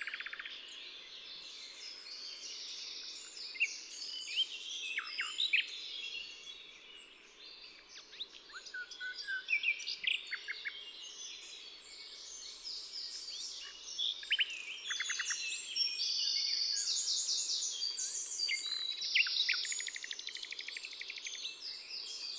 Unnamed Road, Flaxieu, France - Bords du Séran avril 1998
Marais de Lavours Bugey
Tascam DAP-1 Micro Télingua, Samplitude 5.1
24 April 1988, 10:00